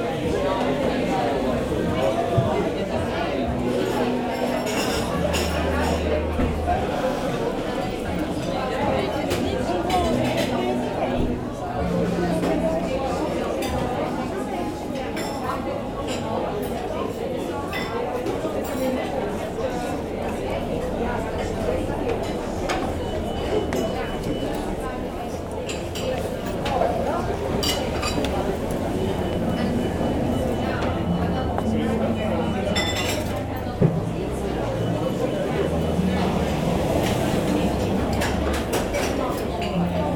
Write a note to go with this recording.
A noisy and very busy bar during the lunchtime.